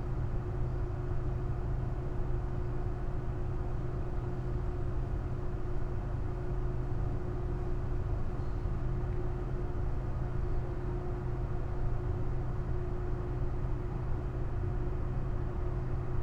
Krematorium Baumschulenweg, Berlin - machine drone from inside
Berlin, cemetery / crematorium Baumschulenweg, drone heard at the basement, at a metal door, coming from inside
(Sony PCM D50, Primo EM172)